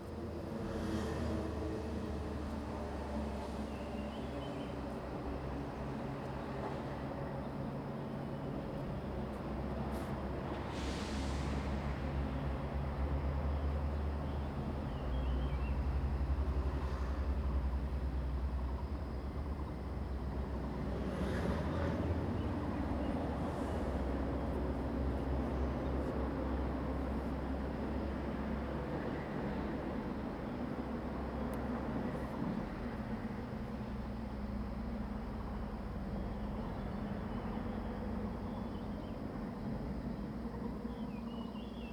向山遊客中心, Nantou County - Bird and traffic sounds
Bird and traffic sounds
Zoom H2n MS+XY